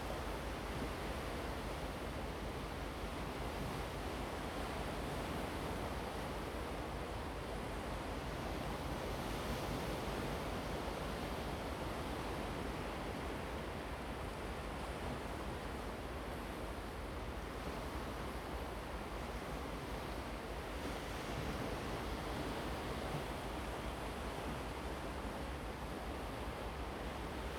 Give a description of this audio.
On the coast, Sound of the waves, Zoom H2n MS +XY